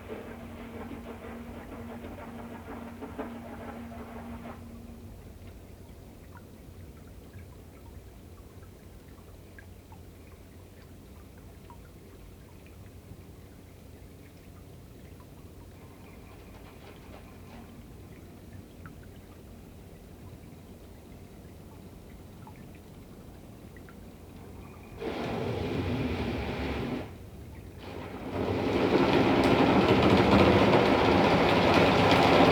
Gamle Oslo, Oslo, Norway - Oslo Hydro
Underwater recording of a tourist boat pottering about the bay, opposite the Opera. Aquarian Audio / Tascam DR40